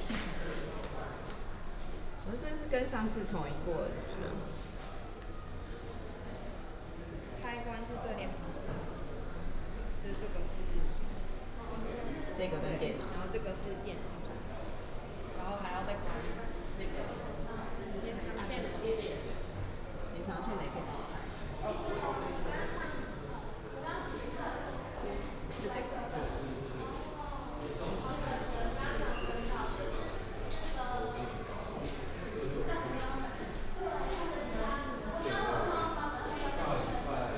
臺灣大學地理系館, Taipei City - In the hall
In the hall, At the university